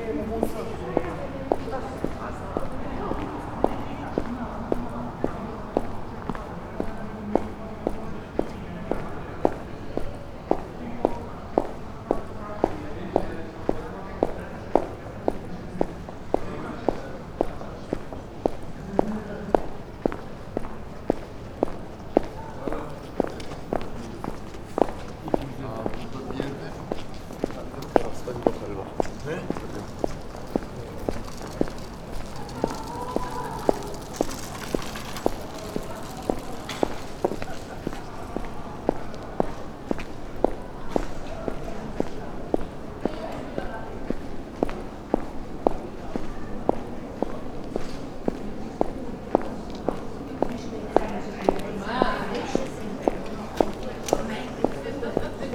Innere Stadt, Graz, Austria - green boots, walking, listening walls